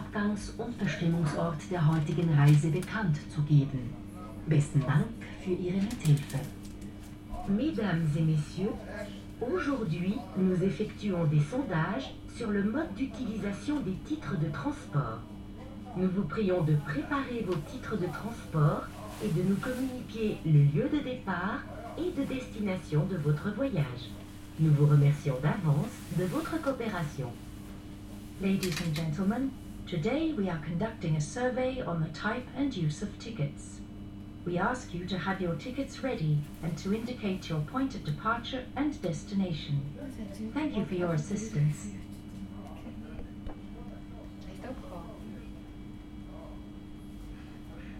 Spiez nach Goppenstein
Fahrt im Zug nach Goppenstein
Spiez, Schweiz, 11 July 2011, 19:15